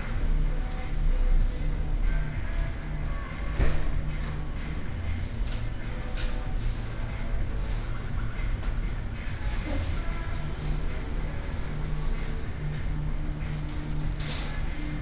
Neihu District - In the restaurant
in the Yoshinoya, Sony PCM D50 + Soundman OKM II
Neihu District, Taipei City, Taiwan, 10 September, 15:49